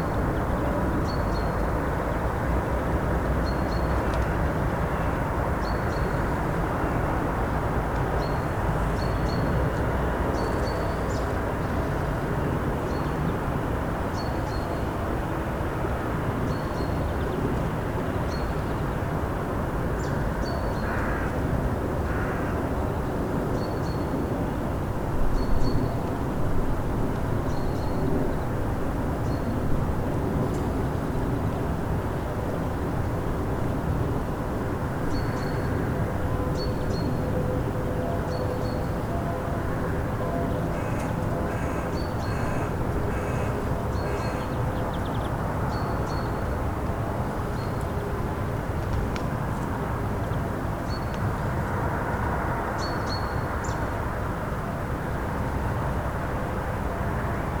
bergwerk ost, parkplatz - bergwerk ost, hamm (westf), parkplatz
bergwerk ost, hamm, parkplatz
January 2010